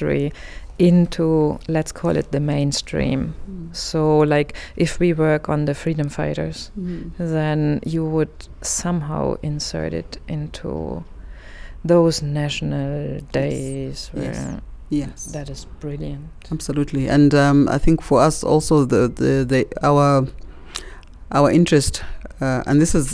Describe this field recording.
we are in the Lusaka National Museum... on invitation of Mulenga Kapwepwe, i had just been able to join a discussion of a resourceful group of women, among them artists, bloggers, writers, an architect, a lawyer…; they belong to a Cooperative of ten women who are the makers and movers of what is and will be the Museum of Women’s History in Zambia. After the meeting, I managed to keep Mulenga and Samba Yonga, the co-founders of the Women’s History Museum for just about long enough to tell us how this idea and organisation was born, what’s their mission and plans and how they will go about realizing their ambitious plans of inserting women’s achievements into to the gaping mainstream of history… (amazing work has been done since; please see their website for more)